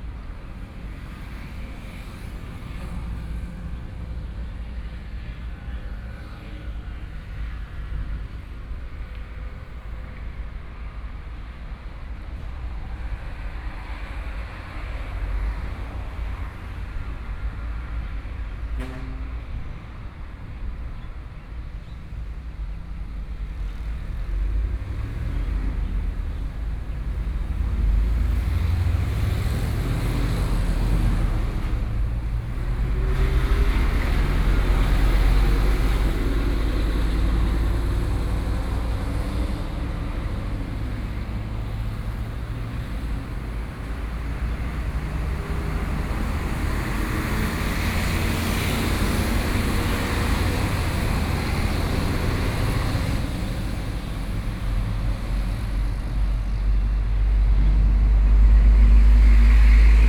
Leading to the port, There are a lot of big trucks appear, Sony PCM D50 + Soundman OKM II